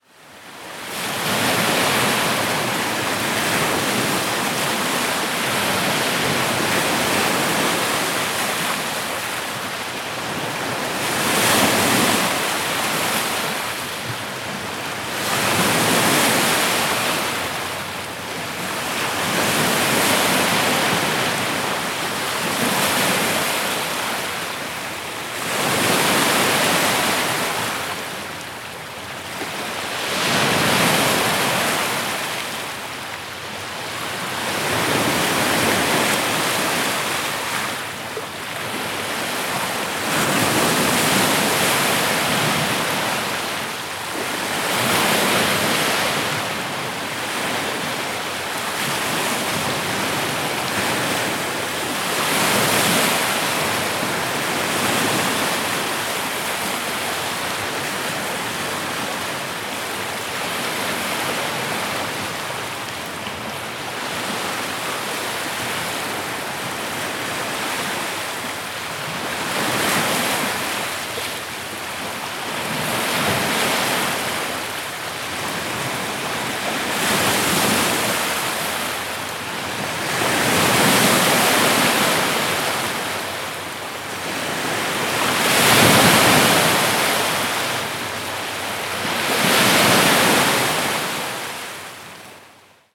Ashbridges Bay Park, Toronto, ON, Canada - WLD 2018: Ashbridges Bay Park 2
Slightly larger waves than Ashbridges Bay Park 1, on rocks.